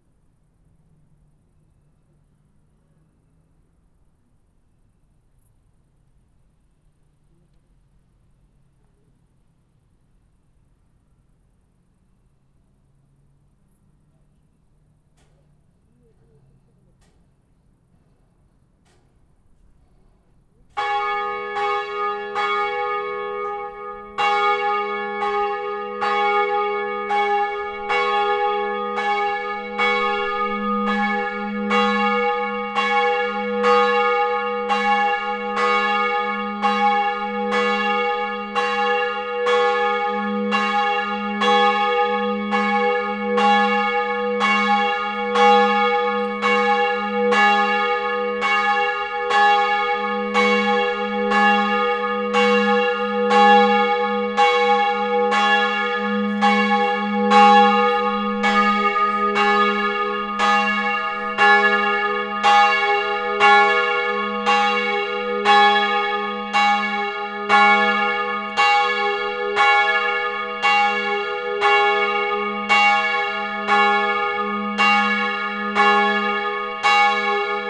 Baumgartner Höhe, Wien-Penzing, Österreich - Church bells of Steinhof
Bells of the church by Otto Wagner, Angelus at 7pm; recorded with XY-90° Zoom H6
28 September 2018, ~19:00